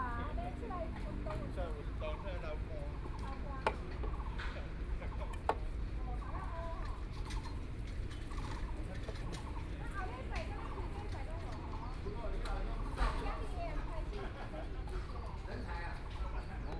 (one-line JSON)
{"title": "BiHu Park, Taipei City - in the Park", "date": "2014-05-04 10:37:00", "description": "Construction works of art, Aircraft flying through, Walking to and from the sound of the crowd, Frogs sound", "latitude": "25.08", "longitude": "121.58", "altitude": "19", "timezone": "Asia/Taipei"}